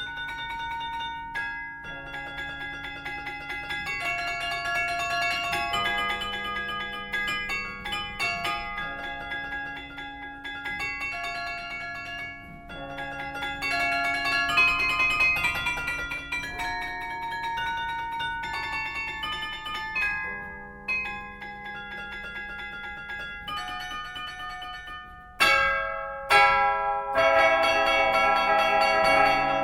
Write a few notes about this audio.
Carillon of the Mons belfry. Melody is played by Pascaline Flamme.